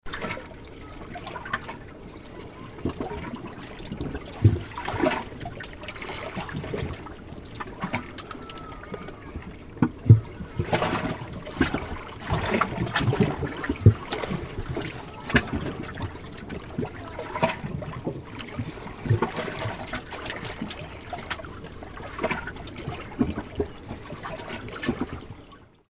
Kraljevica, Ostro, seeside
Little see cave. mic inside the cave.
recording setup: M/S technique (Sony ECM-MS907 stereo condenser mic. via Sony MD@44100KHZ 16Bit)